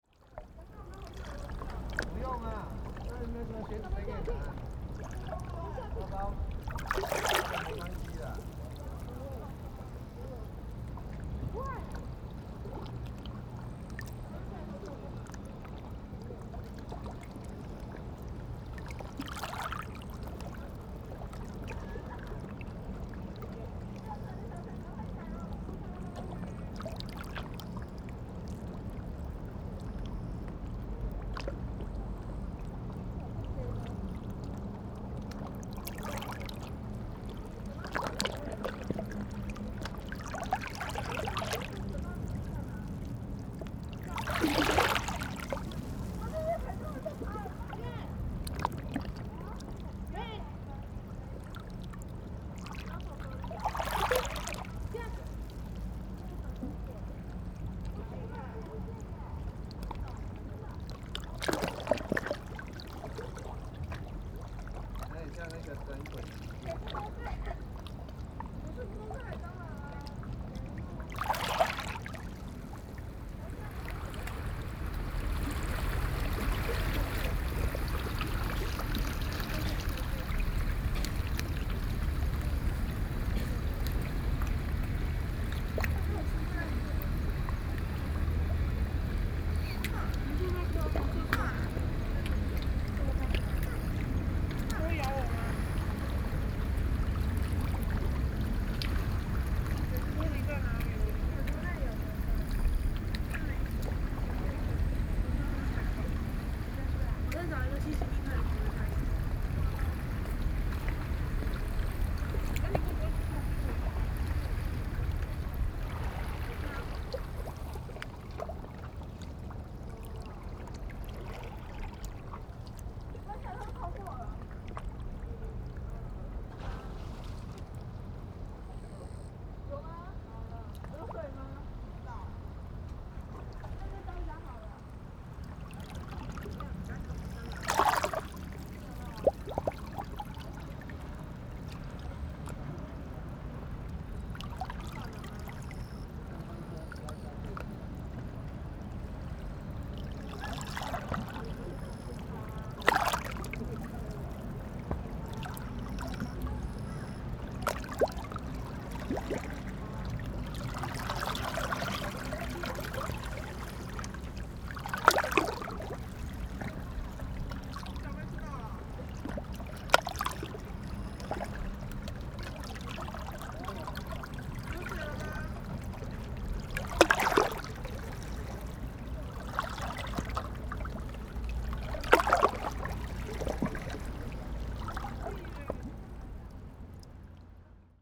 鼻頭角, Ruifang Dist., New Taipei City - Tide
Hot weather, Tide, Visitor
Sony PCM D50
New Taipei City, Taiwan, 12 July 2012